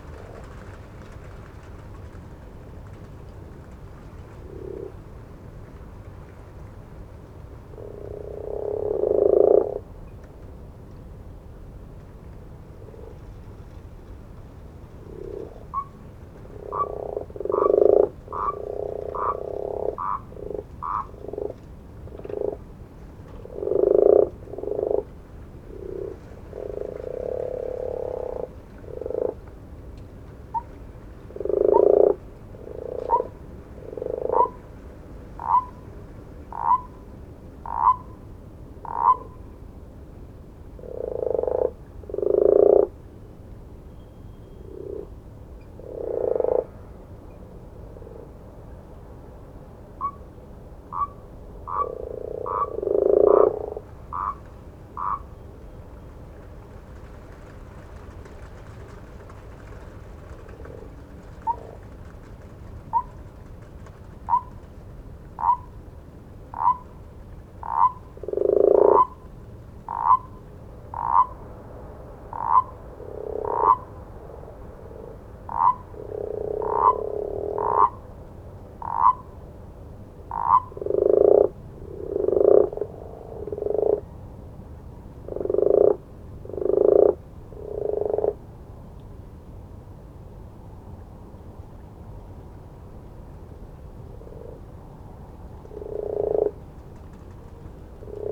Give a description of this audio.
I have no idea what species the frogs or toads are. They arrive every year at this time and call for much of the day and night while remaining completely invisible. There is one call by a donkey from a farm about a mile away and a few distant owls from the wooded eastern side of the Malvern Hills. Thankfully it was a calm night with just a few gusts and hardly any cars. A wind chime is heard very faintly from somewhere in the street. I enjoy the distant jet planes.